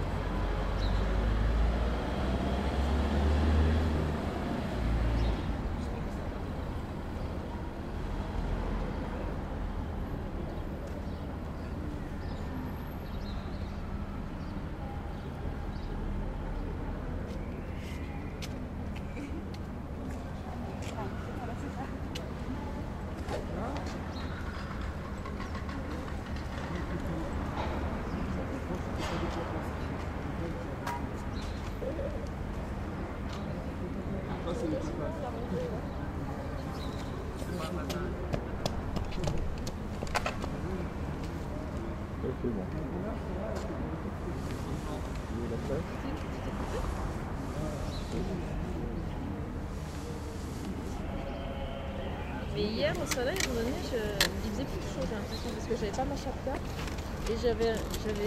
marseille, place labadie
pendant le tournage de vieilles canailles